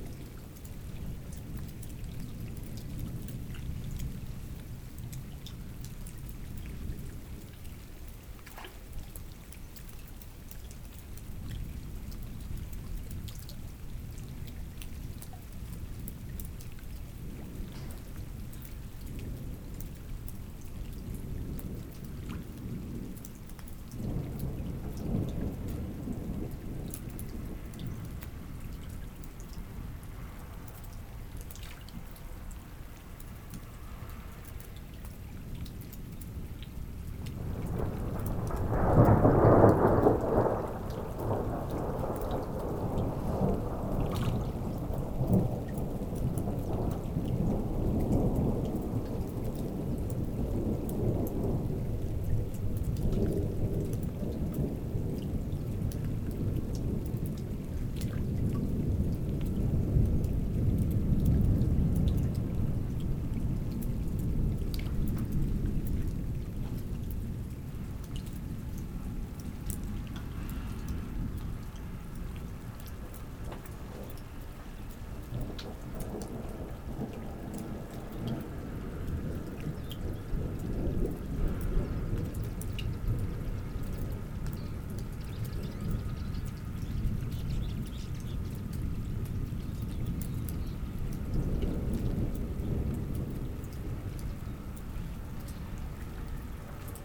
A strong storm on the very poor village of Garnarich. We wait below a small bridge and near a small river. We are wet and cold.
Armenia, 10 September 2018